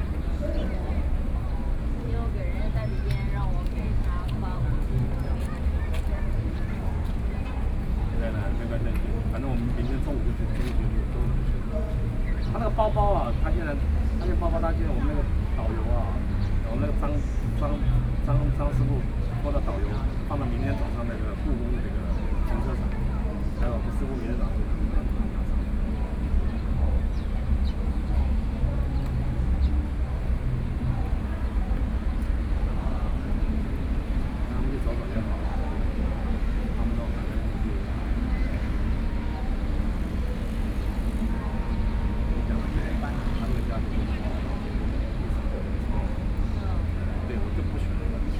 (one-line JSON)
{"title": "100台灣台北市中正區東門里 - Hot noon", "date": "2013-08-18 16:43:00", "description": "Visitors taking a break chat, Sony PCM D50 + Soundman OKM II", "latitude": "25.04", "longitude": "121.52", "altitude": "5", "timezone": "Asia/Taipei"}